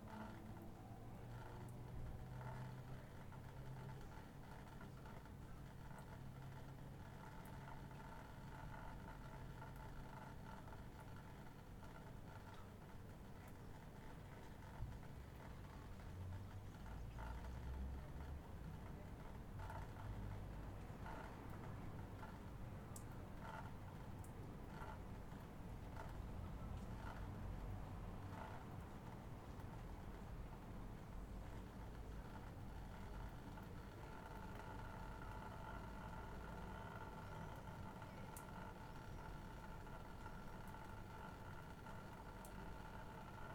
Skibbereen - Skibbereen after heavy rain
A night time recording from the back window of my apartment after a heavy rainfall. Birds, cars, drainpipes, sounds from houses and drunken people all mixing together.
Recorded onto a Zoom H5 with an Audio Technica AT2022 resting on a windowsill.
Munster, Ireland, 26 July 2020